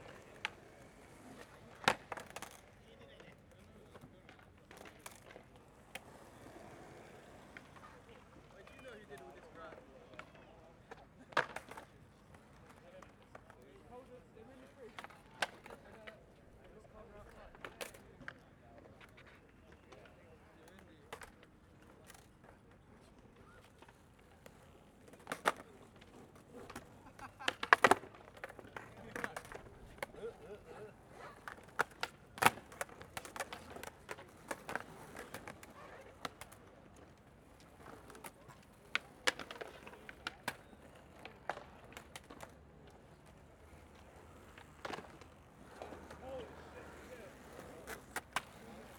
Rose Walk, The Level, Brighton, Vereinigtes Königreich - Brighton - the Level - Skater Park
In Brighton at the Level - a public skater park - the sounds of skating
soundmap international:
social ambiences, topographic field recordings
18 March, 12:45